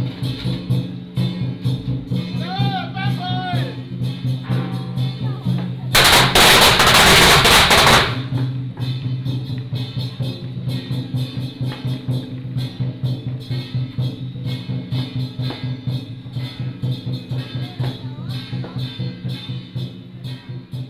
Walking in the alley, Next to the temple, Pilgrimage group, firecracker